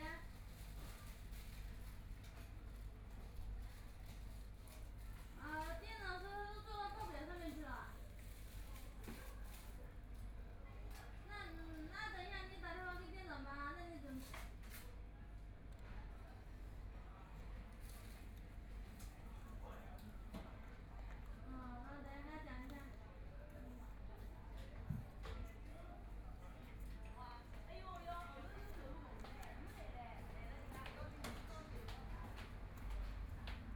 In the restaurant, Binaural recording, Zoom H6+ Soundman OKM II
25 November 2013, ~17:00